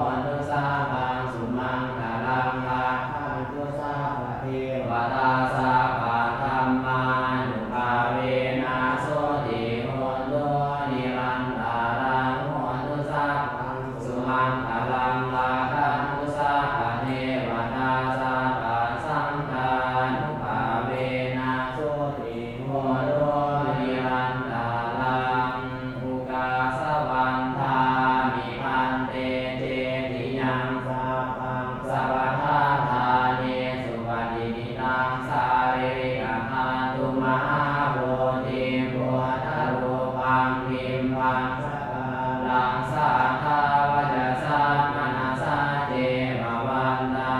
Luang Prabang, Wat Mai, Ceremony